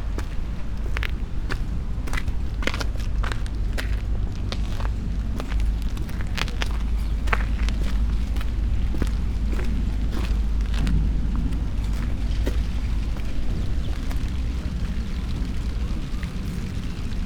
river ships, Märkisches Ufer, Berlin, Germany - rain
Sonopoetic paths Berlin